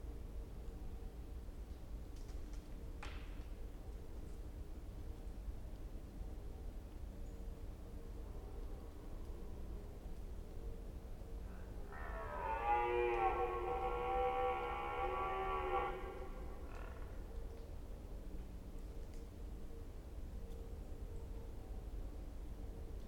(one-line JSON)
{"title": "Locquignol, France - Brame du cerf à 7h", "date": "2022-10-04 07:00:00", "description": "En Forêt de Mormal, arrivé un peu tard pour avoir également le cri des chouettes en proximité, ce brame du cerf nous est offert avec le son du clocher en lointain.\nSonosax SXM2D2 DPA 4021 dans Albert ORTF sur iPhone", "latitude": "50.19", "longitude": "3.70", "altitude": "170", "timezone": "Europe/Paris"}